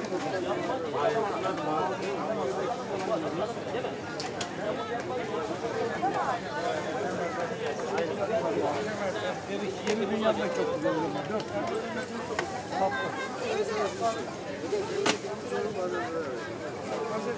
Dalyan Belediyesi, Dalyan/Ortaca/Muğla Province, Turkey - Dalyan market chatter
Chatter and commerce in Dalyan's weekly market.
(Recorded w/ AT BP4029 on SD 633)